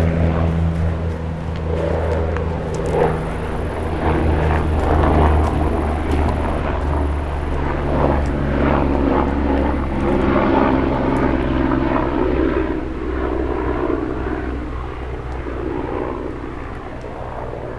Chemin de Plan Montmin, Faverges, France - En chemin
Sur le chemin de plan Montmin au dessus de Vesonne, rencontre. Les pierres du chemin, un hélicoptère de passage et une rencontre.
France métropolitaine, France